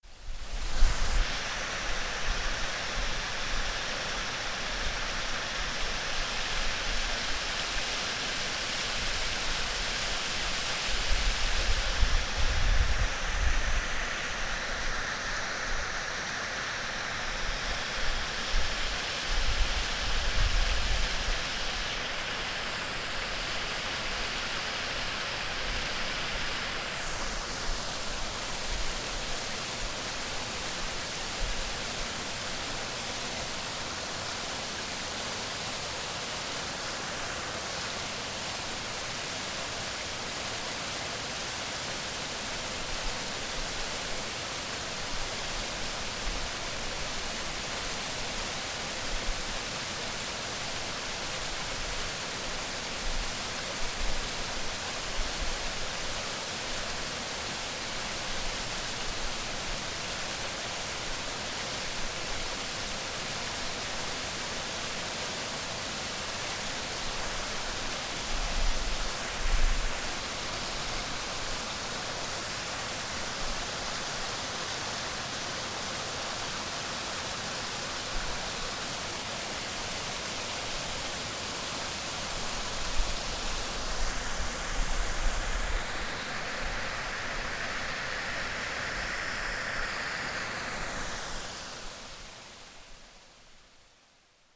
Trehörningsjö, vattenfall - Waterfall
Small waterfall just above the old mill at the bridge over the rapids Husån. Recording made during the soundwalk on World Listening Day, 18th july 2010.